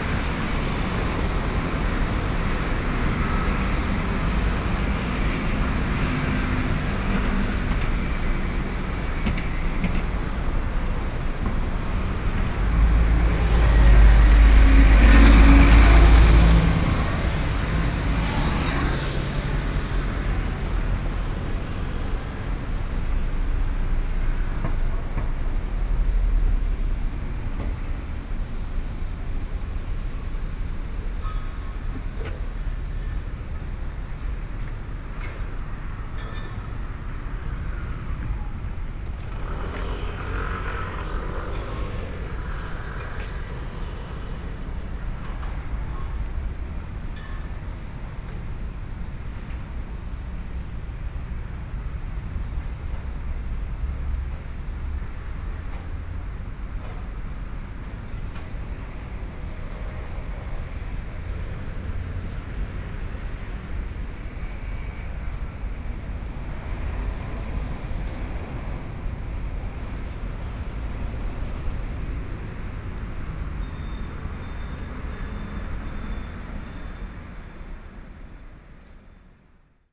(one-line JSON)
{"title": "Sanduo 1st Rd., Lingya Dist. - At the intersection", "date": "2014-05-15 16:50:00", "description": "At the intersection, Traffic Sound", "latitude": "22.62", "longitude": "120.34", "altitude": "15", "timezone": "Asia/Taipei"}